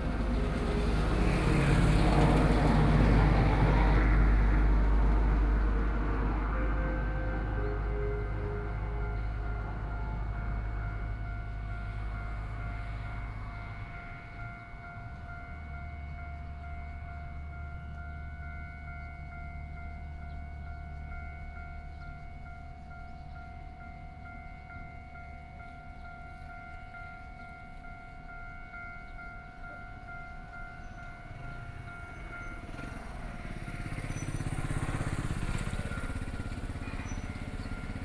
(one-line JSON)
{"title": "Sugang Rd., Su'ao Township - Trains traveling through", "date": "2014-07-28 14:00:00", "description": "At the roadside, Traffic Sound, Hot weather, Trains traveling through", "latitude": "24.59", "longitude": "121.84", "altitude": "12", "timezone": "Asia/Taipei"}